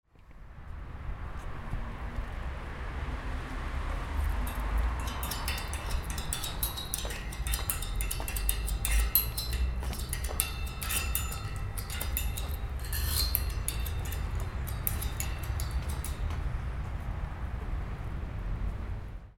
Faulerbad, Hallenbad, und Kunst auf der Liegewiese im Faulerbad